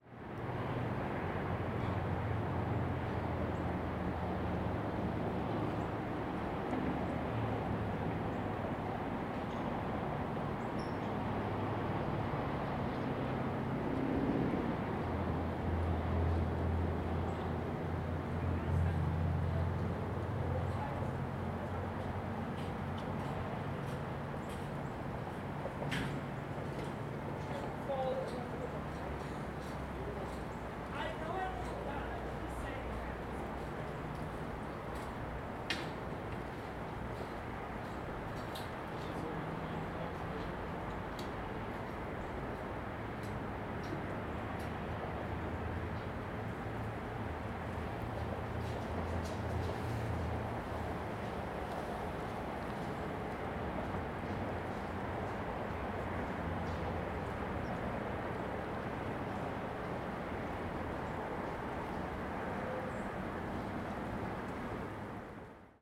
BNSF Bridge, Valley Park, Missouri, USA - BNSF Bridge
Recording from Meramec Greenway beneath the BNSF railway bridge where it crosses the Meramec River. It is a Baltimore three span through truss bridge and was built in 1923. An estimated 30 trains cross it a day. A couple is heard overhead returning from a dangerous walk to its center pier.
Saint Louis County, Missouri, United States